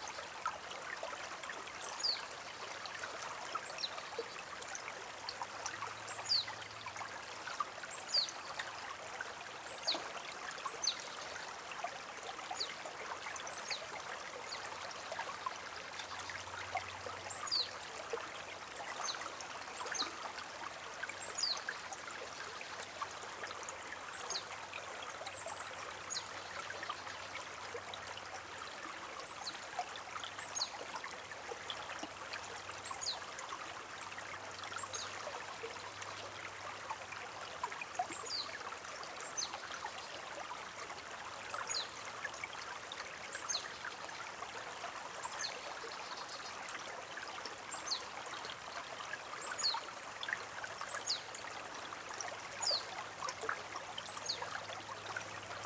early evening in the swamp near Utena (Lithuania)